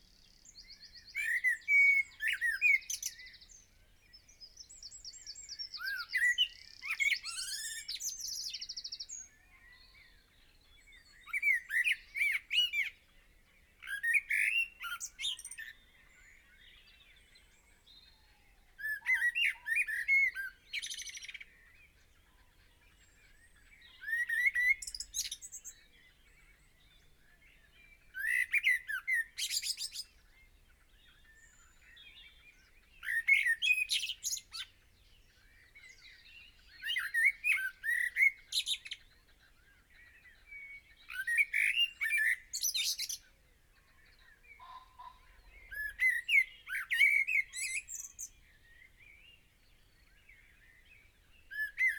Green Ln, Malton, UK - blackbird song ... red-legged partridge calls ...
blackbird song ... red-legged partridge calls ... dpa 4060s to Zoom H5 clipped to twigs ... blackbird song for the first 12 mins ... red-legged partridge call / song after 15 mins ... bird call ... song ... from ... pheasant ... rook ... crow ... tawny owl ... wren ... willow warbler ... robin ... blackcap ... wood pigeon ...
12 April 2020, ~05:00